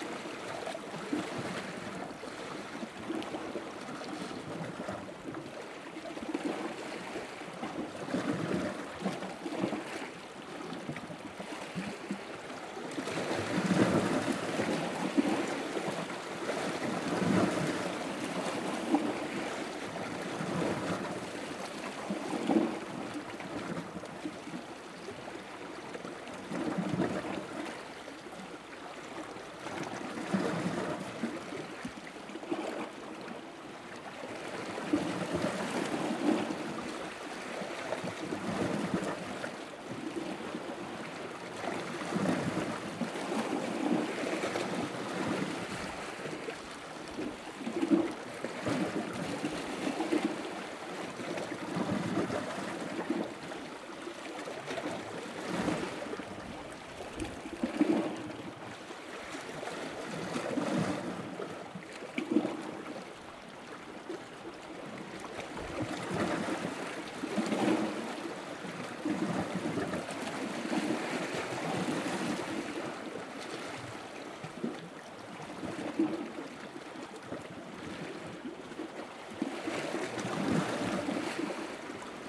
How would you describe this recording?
Very calm sea waves breaking into a crack in the rocks and disappearing. This place was covered in a sloppy black seaweed which I think dampened the impact of the waves on the rocks. (Zoom H4n internal mics)